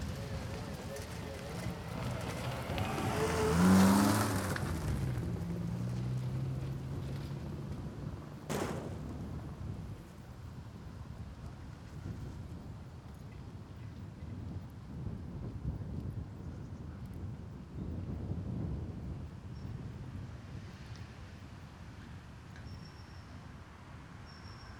Poznan, city limits, close to Morasko Campus - fright train
a very long fright trains passing just in front of the mics. every passing car has slightly different characteristic of its rumble and wailing.